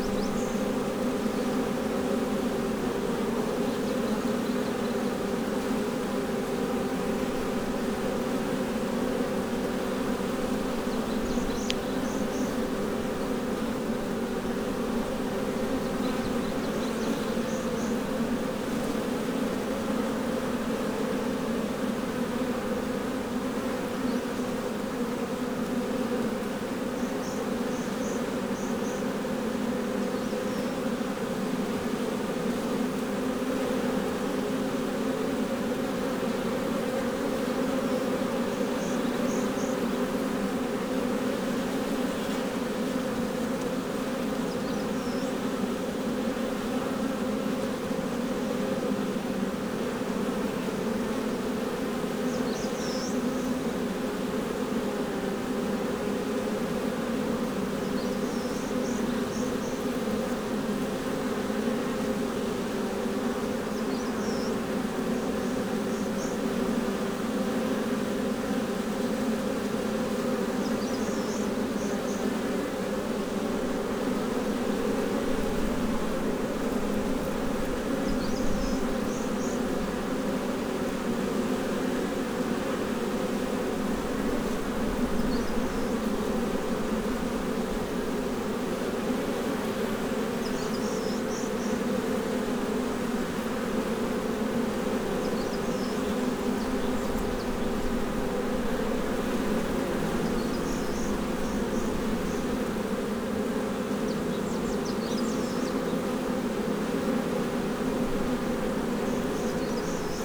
퇴골계곡 꿀벌집 속에 4월18일 into the beehive
...the bees become somewhat disturbed and defensive as the apiarist opens up the hive and removes the combs for inspection...